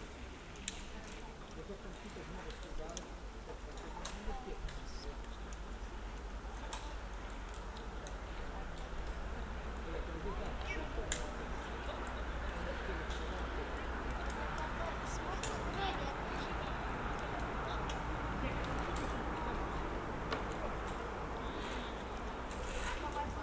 Zarasai, Lithuania, amusement park
Sennheiser Ambeo Smart headset recording in amusement park